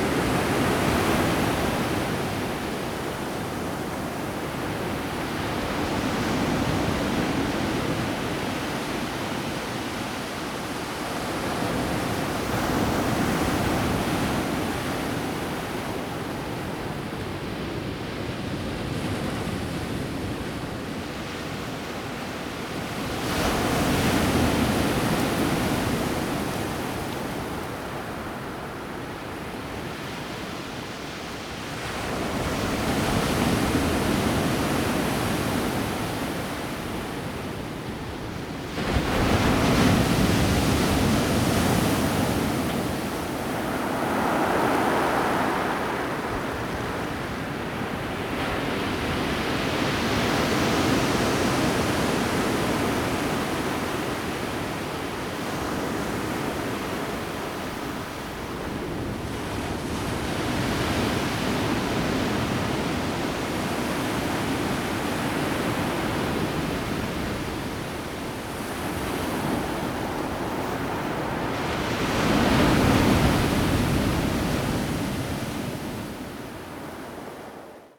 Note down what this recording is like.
Waves, at the beach, Zoom H2n MS+ XY